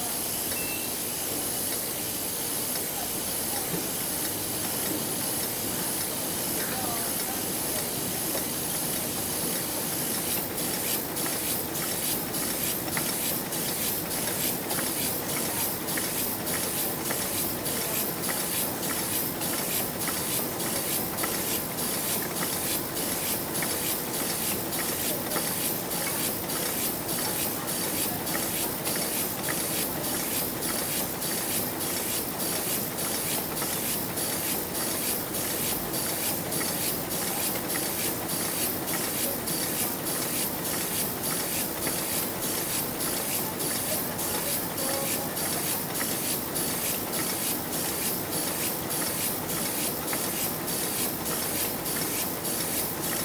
Williams Press, Maidenhead, Windsor and Maidenhead, UK - The sound of my book covers being printed
This is a longer recording of the Heidelberg Speedmaster printing 2,000 covers for my book, The KNITSONIK Stranded Colourwork Sourcebook.